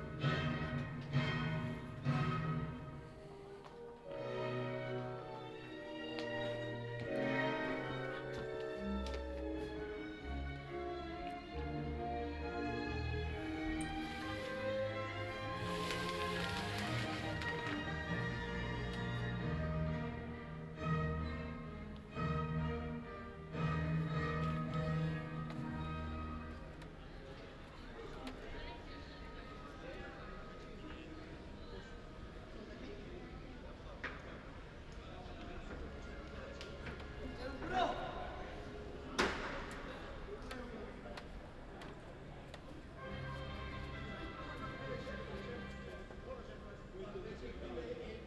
Ascolto il tuo cuore, città. I listen to your heart, city. Several Chapters **SCROLL DOWN FOR ALL RECORDINGS - La flânerie aux temps de la phase IIB du COVID19 Soundwalk
"La flânerie aux temps de la phase IIB du COVID19" Soundwalk
Chapter LXXXIII of Ascolto il tuo cuore, città. I listen to your heart, city
Thursday May 21 2020. Walking in the movida district of San Salvario, Turin four nights after the partial reopening of public premises due to the COVID19 epidemic. Seventy two days after (but day seventeen of Phase II and day four of Phase IIB) of emergency disposition due to the epidemic of COVID19.
Start at 9:48 p.m. end at 10:28 p.m. duration of recording 39’58”
The entire path is associated with a synchronized GPS track recorded in the (kml, gpx, kmz) files downloadable here: